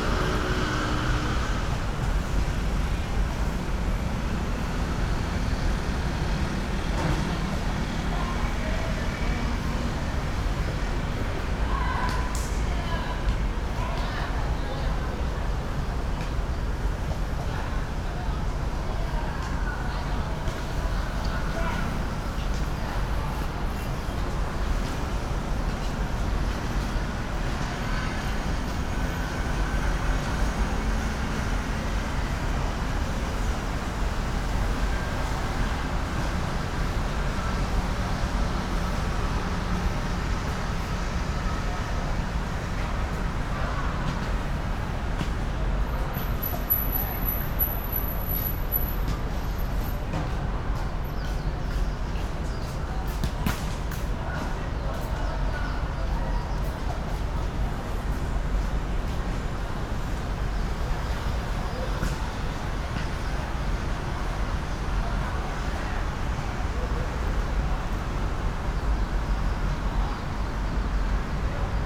Shihjia Junior High School, Kaohsiung - Shihjia Junior High School
Standing next to the school, Environmental Noise, Sony PCM D50